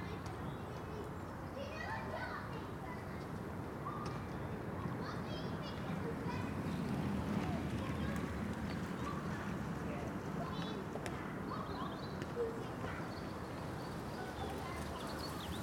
Contención Island Day 75 outer northeast - Walking to the sounds of Contención Island Day 75 Saturday March 20th
The Poplars Roseworth Avenue The Grove Moor Road North St Nicholas Avenue Rectory Grove
The street footballers bounce and shout
helicopter drones
below a single con-trail
The beech hedge glows
burnished copper
a mother and son run laps